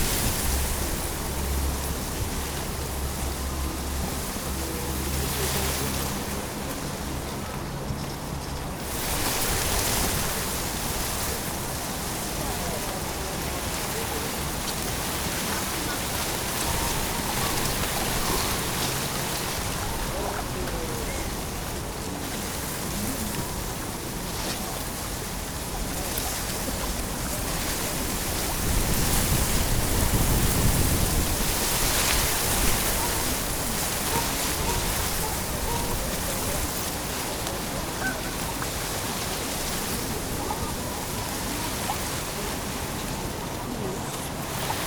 Quartier des Bruyères, Ottignies-Louvain-la-Neuve, Belgique - Reeds
Wind in the reeds, near the Louvain-La-Neuve lake.